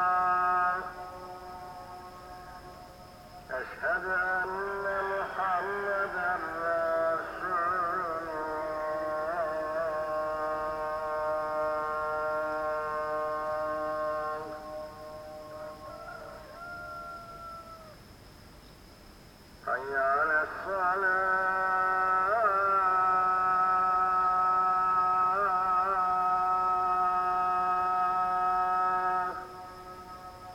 Ulupınar Mahallesi, Unnamed Road, Kemer/Antalya, Turkey - Roosters and ezan
Morning prayer accompanied with the singing of roosters in Çıralı village
26 July, ~05:00